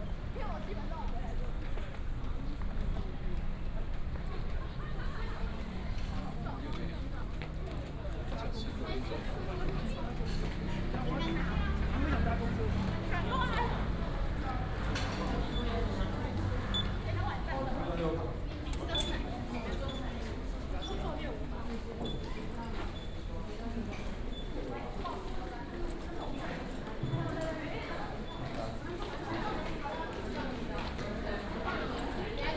Yuanli Station, Yuanli Township - Walking at the station
Walking at the station, Many high school students
Yuanli Township, Miaoli County, Taiwan, 19 January 2017